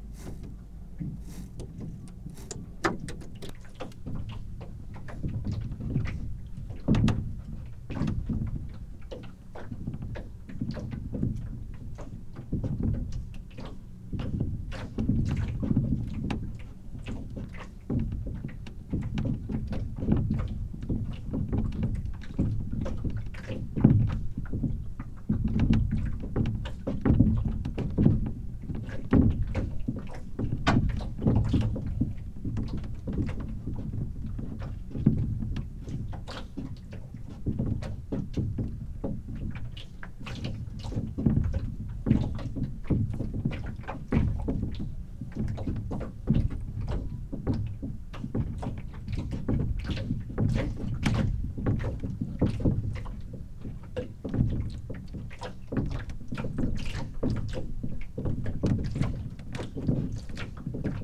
Midnight at the canoe pontoon
Recorded on a late night bicycle ride around Chuncheon lake. There is a small canoe hire business where the wooden craft are moored for the night. There was a slight breeze and lake surface was in motion, setting the canoes to knock against each other and the wharf itself. Thanks to the late hour, what is normally a noisy place was relatively free from engine sound. In the distance can be heard a 소쩍새 (Scops owl (?)).